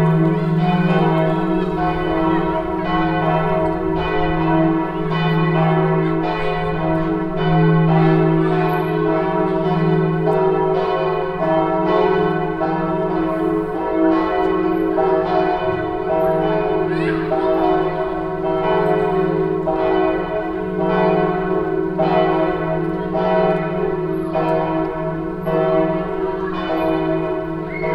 dortmund, schwarze brüder street, church bells
church bells of the probstei church in the evening followed by sounds of children playing on the nearby city playground
soundmap nrw - social ambiences and topographic field recordings